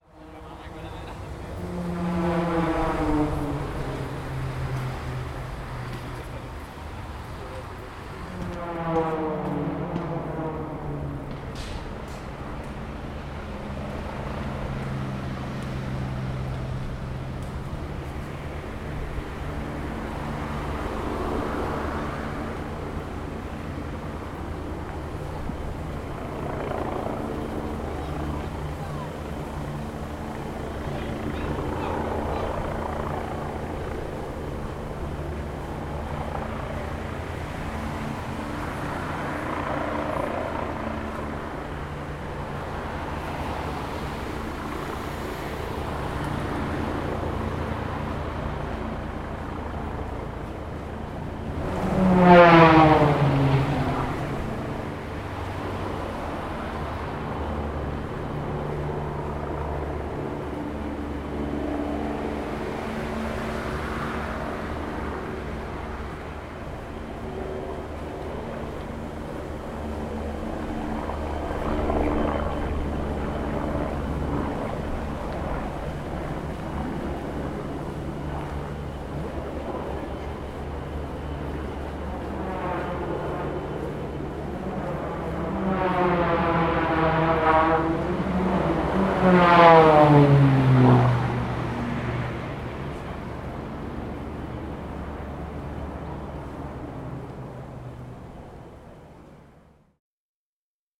Danube, Budapest, Hungary - (-197) Air Races
Recording of Budapest Air races.
Recorded with Zoom H2n